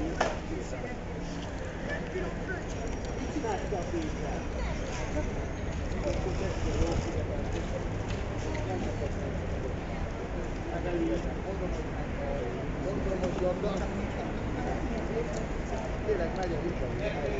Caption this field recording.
Street vendors outside the underground station selling peppers, radishes and lilies of the valley. (Also a nice example of folk etymology: the man shouting "vitaminpaprika" instead of "pritamine paprika") A tram leaves towards the end.